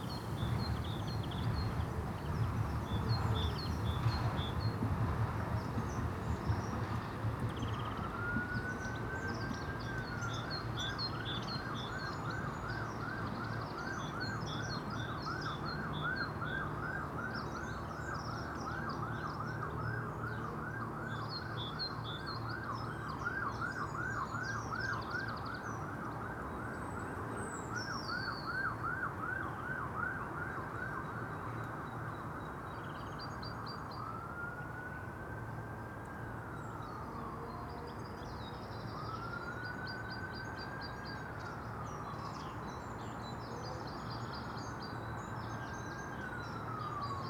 Contención Island Day 78 outer north - Walking to the sounds of Contención Island Day 78 Tuesday March 23rd
The Poplars Roseworth Crescent The Drive Church Road
Alarm train siren car plane saw
I feel pressed
to the back of the churchyard
Tumbled headstones
graves grown with inadvertent pollards
air of half-managed neglect
Blackbird drops from bush to grass
crow takes a beak of straw
dunnock sings
England, United Kingdom, 23 March 2021, 09:15